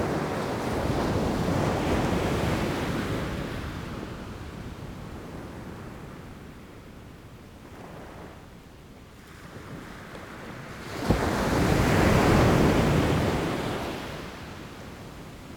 Grève rose, Trégastel, France - Peacefull high tide - Closer [Grève rose]
Soirée. Vagues calmes pendant la marée haute. Micros à même le sable.
Evening. Peacefull waves during the high tide. Closer.
April 2019.
France métropolitaine, France, April 2019